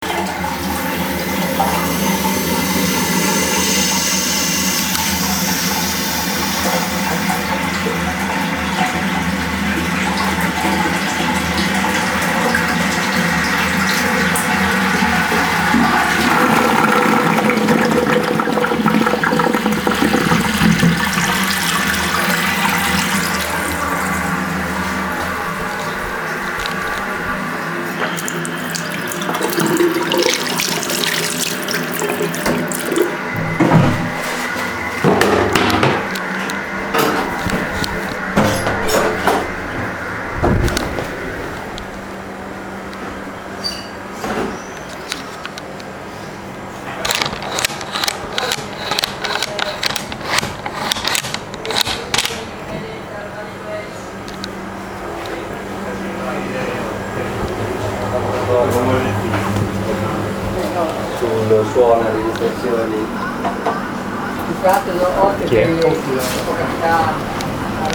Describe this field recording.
Toilet flus & skin. Recorded with SONY IC RECORDER ICD-PX440